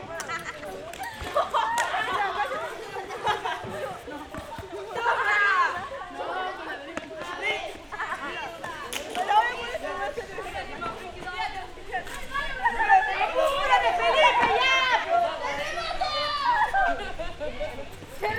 Plaza el Descanso, Valparaíso, Chile - school kids playing
Plaza el Descanso, kids from the nearby school playing and training. During daytime, the place is used as a sort of schoolyard, for breaks and exercises.